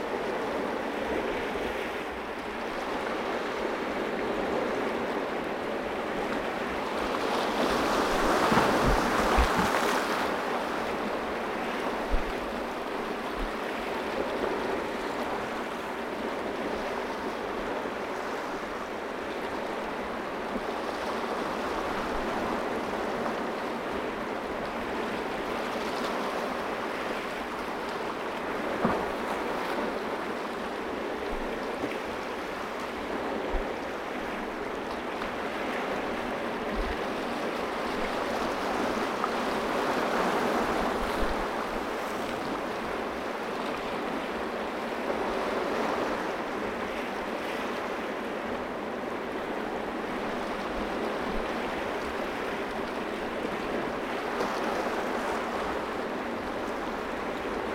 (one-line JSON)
{"title": "Bathurst Lighthouse, Rottnest Island WA, Australien - Waves on the rocky shore at night below the lighthouse", "date": "2012-05-03 21:45:00", "description": "Recorded with a Sound Devices 702 field recorder and a modified Crown - SASS setup incorporating two Sennheiser mkh 20 microphones.", "latitude": "-31.99", "longitude": "115.54", "altitude": "6", "timezone": "Australia/Perth"}